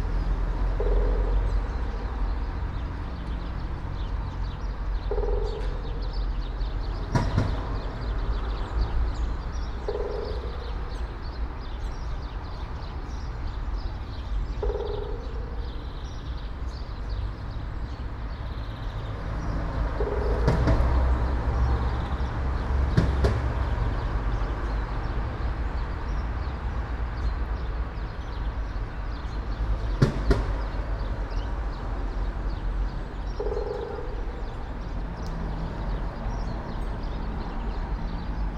all the mornings of the ... - apr 4 2013 thu